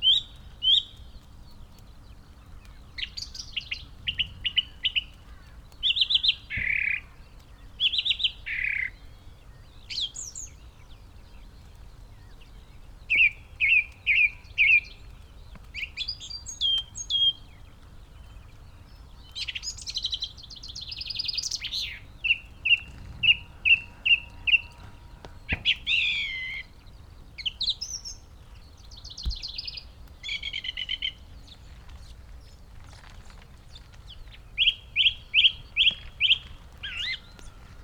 Green Ln, Malton, UK - song thrush in the rain ...
song thrush in the rain ... bird singing ... pre-amped mics in a SASS to LS 14 ... bird calls ... song ... from ... chaffinch ... red-legged partridge ... great tit ... pheasant ... crow ... skylark ... linnet ...
England, United Kingdom